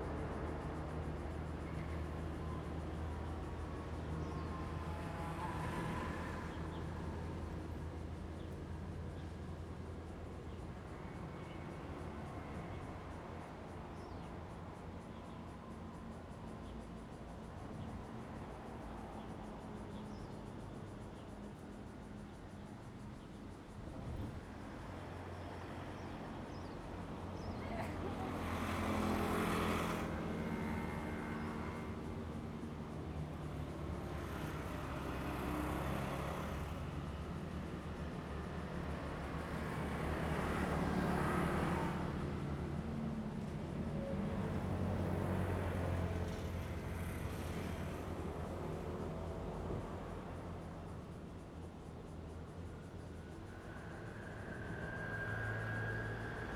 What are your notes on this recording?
Railway level crossing, Traffic Sound, Train traveling through, Zoom H2n MS+XY